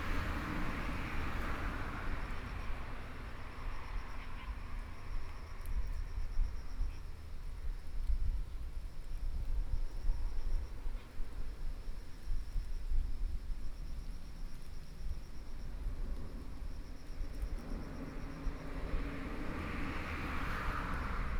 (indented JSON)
{
  "title": "員山鄉中華村, Yilan County - Small village",
  "date": "2014-07-25 13:50:00",
  "description": "In a small temple square, Traffic Sound\nSony PCM D50+ Soundman OKM II",
  "latitude": "24.71",
  "longitude": "121.67",
  "altitude": "66",
  "timezone": "Asia/Taipei"
}